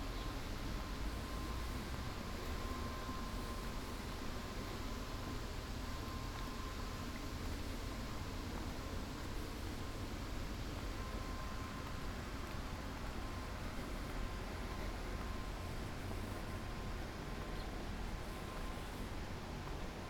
Walking at PortBou on the trace of Walter Benjamin, September 28 2017 starting at 10 a.m. Bar Antonio, on the seaside, ramble, tunnel, out of tunnel, tunnel back, ramble, former Hotel Francia.
Portbou, Girona, Spain, 28 September 2017, 10:00